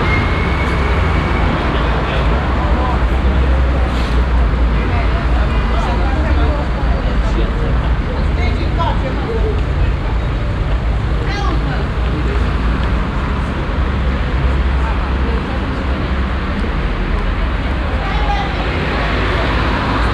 Central Area, Cluj-Napoca, Rumänien - Cluj, taxi stand, street traffic and passengers
On the street at a taxi stand. The sounds of passing by traffic, parking and going taxi's and passengers walking and talking.
international city scapes - topographic field recordings and social ambiences